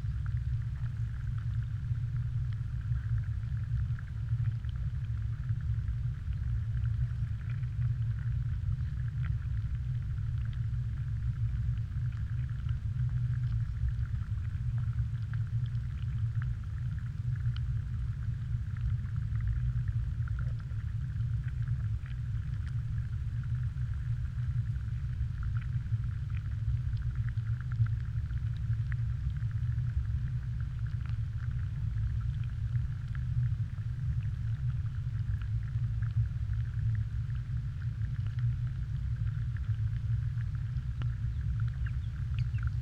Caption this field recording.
4 tracks: 2 omnis capturing the soundscape and low hum of the tube, and 2 hydros capturing aquatic life